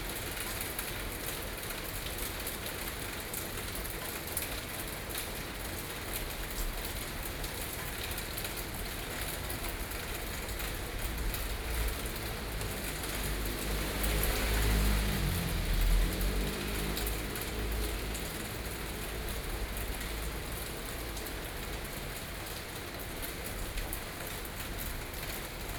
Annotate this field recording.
Early morning thunderstorms, Sony PCM D50 + Soundman OKM II